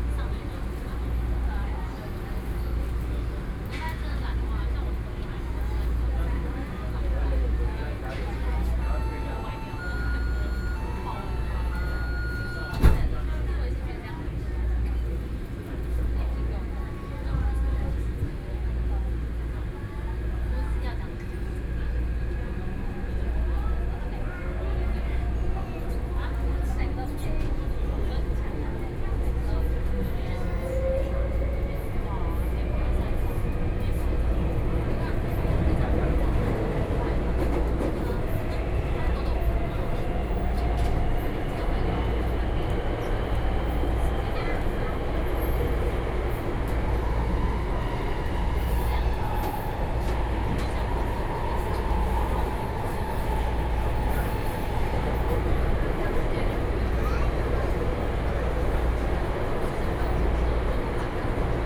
Shilin District, Taipei - Inside the MRT
Inside the MRT, from Shilin to Downtown, Sony PCM D50 + Soundman OKM II
台北市 (Taipei City), 中華民國, 1 July 2013, ~21:00